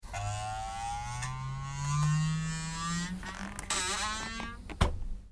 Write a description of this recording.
recorded on night ferry travemuende - trelleborg, july 19 to 20, 2008.